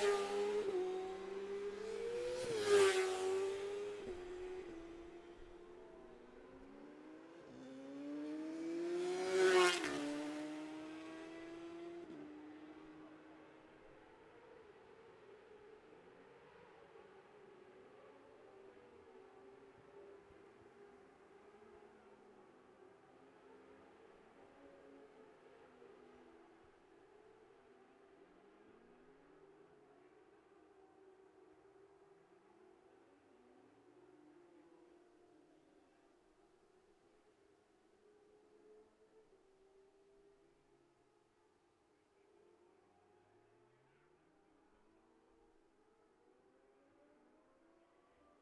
Gold Cup 2020 ... 600 evens practice ... dpa bag MixPre3 ...
Scarborough, UK, 11 September